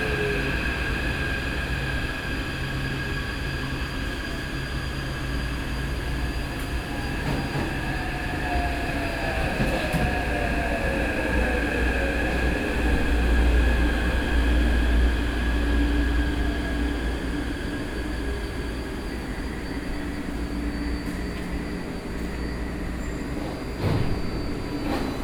Beitou, Taipei City - MRT
Inside the MRT, from Fuxinggang Station to Qiyan Station, Sony PCM D50 + Soundman OKM II